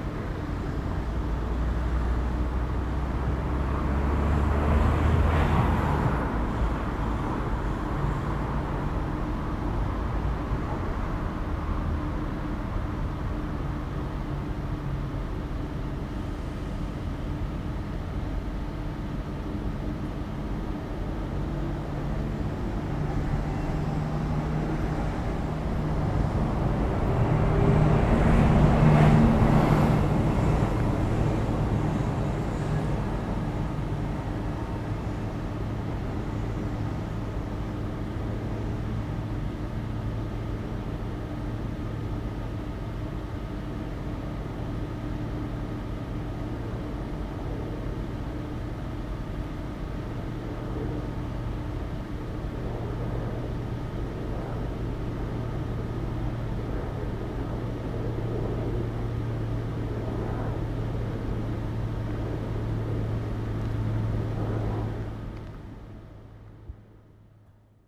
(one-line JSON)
{"title": "Bd de la Mer Caspienne, Le Bourget-du-Lac, France - Savoie Technolac", "date": "2022-09-07 11:15:00", "description": "Ici il n'y a que des sons anthropiques en cette saison, ventilation de l'Espace Montagne, parachutistes, véhicules en circulation.", "latitude": "45.64", "longitude": "5.87", "altitude": "235", "timezone": "Europe/Paris"}